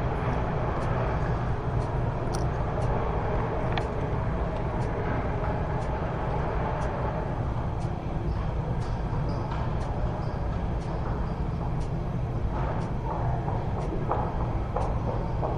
112台灣台北市北投區學園路1號國立臺北藝術大學圖書館 - the sound around the pond
the pumping motor
Taipei City, Taiwan, 18 October 2012, ~16:00